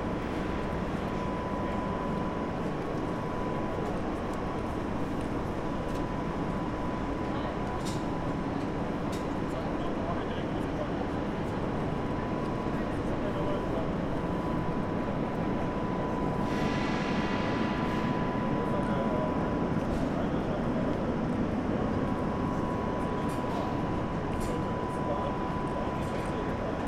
Frankfurt (Main) Hauptbahnhof, Gleiszugang - 27. März 2020 Gleiszugang
The station is not very busy. Some workers of Deutsche Bahn are discussing stuff, there seems not much to do. People pass by, a beggar is asking for money, trains are arriving and leaving. The pigeons are still there and people - but only once - run to catch a train. But again it is quite quiet.
Hessen, Deutschland, March 2020